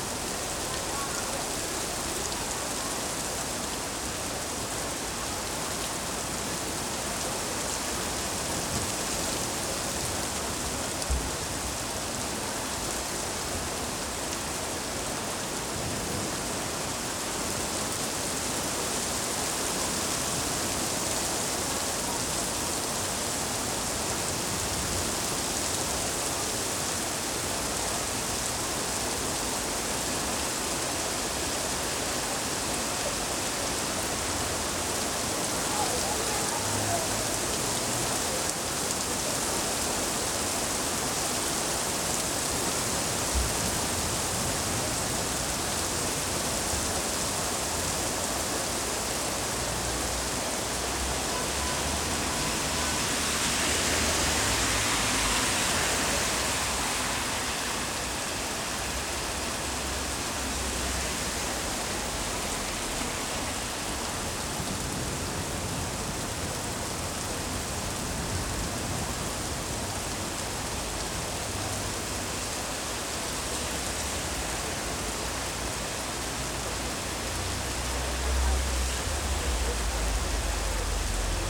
{"title": "Brabanter Str., Köln, Deutschland - Summer storm", "date": "2021-06-04 20:30:00", "description": "Summer storm, Cologne city centre, Tascam WPM-10 mics, MOTU traveler Mk3", "latitude": "50.94", "longitude": "6.94", "altitude": "56", "timezone": "Europe/Berlin"}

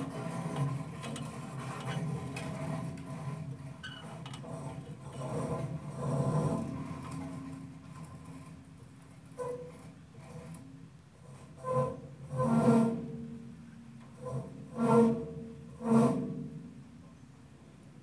{"title": "bed frame installation, Istanbul", "date": "2010-02-22 15:12:00", "description": "installation made from junk found at an abandoned house on Bugazada. Object were moved in the space by Muharrem and John.", "latitude": "40.88", "longitude": "29.06", "altitude": "43", "timezone": "Europe/Tallinn"}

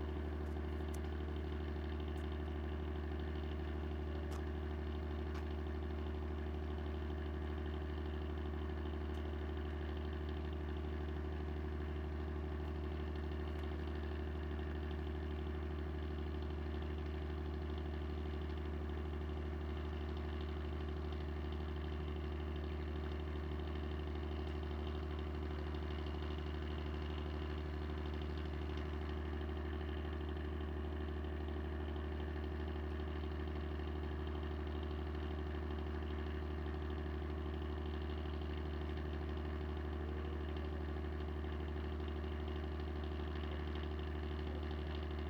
{"title": "Rab, Ferry", "description": "waiting for ferry", "latitude": "44.71", "longitude": "14.86", "altitude": "2", "timezone": "Europe/Berlin"}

